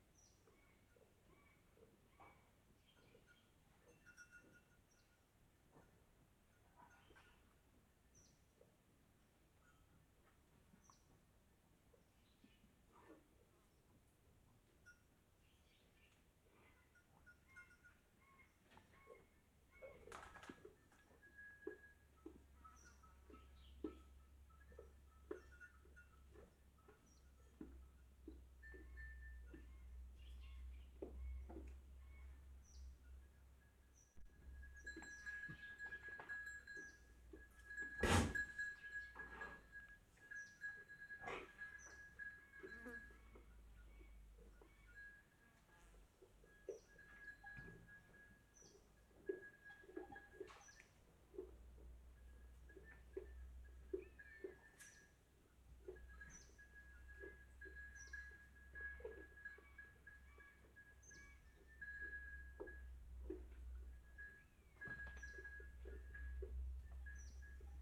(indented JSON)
{
  "title": "Ventejís, Valverde, Hierro, Santa Cruz de Tenerife, Santa Cruz de Tenerife, España - Silencio en la granja de Manuel",
  "date": "2012-08-19 19:30:00",
  "description": "Recording made at the farm of Manuel.",
  "latitude": "27.80",
  "longitude": "-17.95",
  "altitude": "851",
  "timezone": "Atlantic/Canary"
}